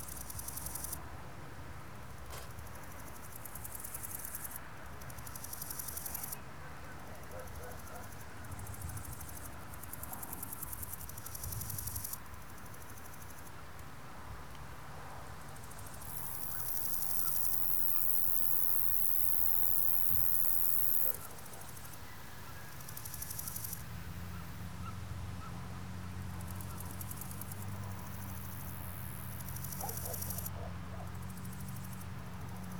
Poznan, Morasko, field road - third conversation
this is the third kind of cricket sounds i picked up on the same road. interesting that same animal uses so many different sounds within one area and time frame.